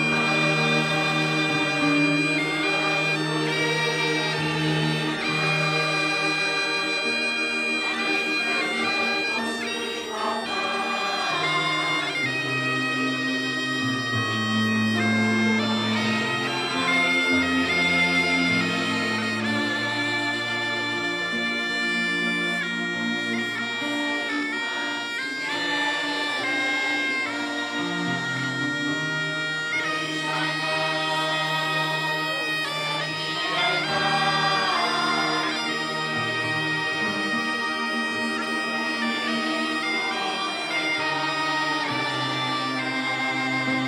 R. Maestro Artur Salguinha, Ançã, Portugal - 25 de Abril commemorations in Ançã
Comemorations of the Carnation Revolution/25 de abril in the town of Ançã, Cantanhede.
A group of children, locals, and town officials sing "Grandola Vila Morena" by Jose Afonso - one of the songs broadcasted as a military signal for the revolution.
Coimbra, Portugal, 25 April 2022, 11:30